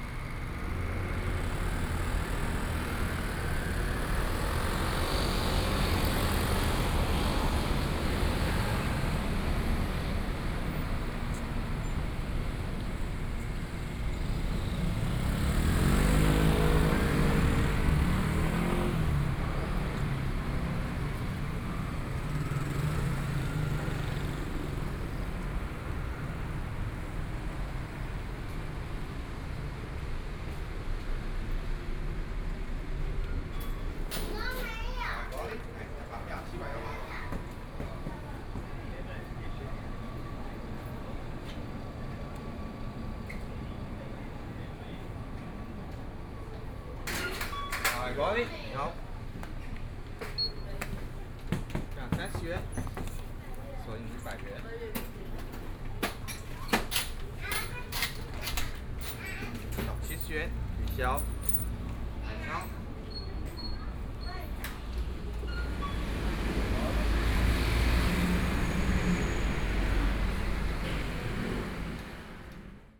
Wende Rd., Taipei City - Traffic Sound
Traffic Sound, Walking into the convenience store, Checkout